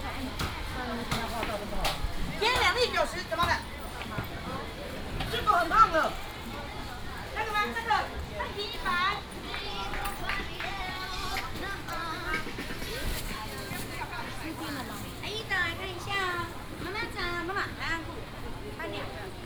楊梅第一市場, Yangmei Dist., Taoyuan City - Old traditional market
Old traditional market, traffic sound, vendors peddling Binaural recordings, Sony PCM D100+ Soundman OKM II